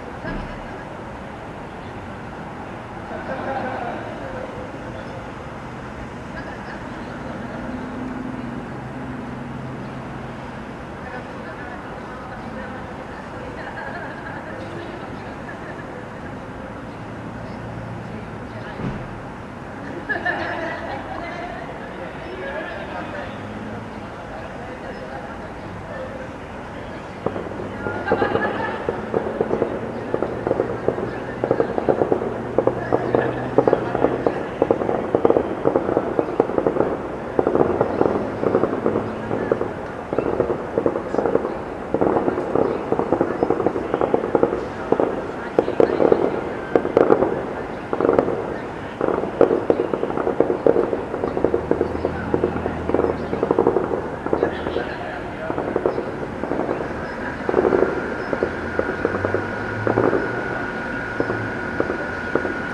Intense shooting heard from the terrace of the Philosophy cafeteria. Bursts of gunfire from the nearby military station "El Goloso" are heard in the background while students talk outside the cafeteria and an occasional car passes by the inner streets of campus.
Sony recorder ICD-PX333
Madrid, Spain, 11 December 2018